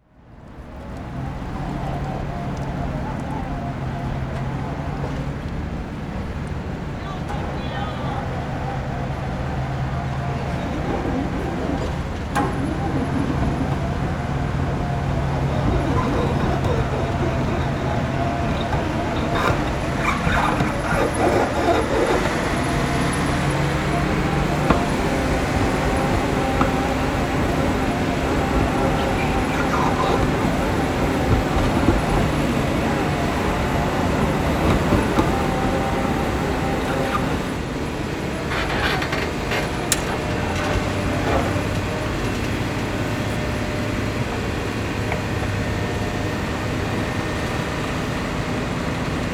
wugu, New Taipei City - Engineering Construction